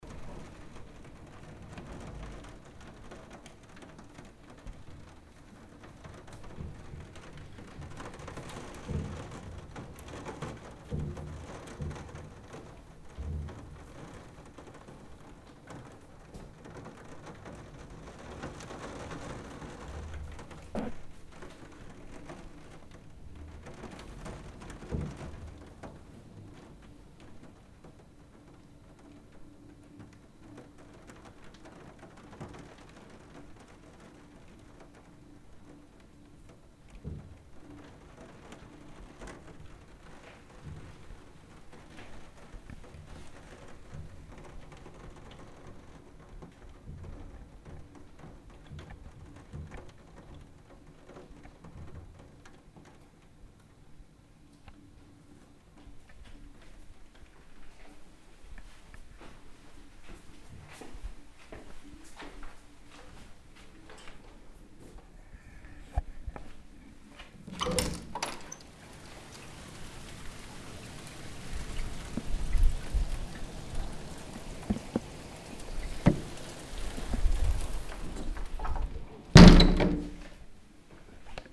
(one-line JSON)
{"title": "Poschiavo, Eintritt in die Kirche", "date": "2011-07-17 15:10:00", "description": "Regen Regen Eintritt Kirche Ruhe", "latitude": "46.33", "longitude": "10.06", "altitude": "1015", "timezone": "Europe/Zurich"}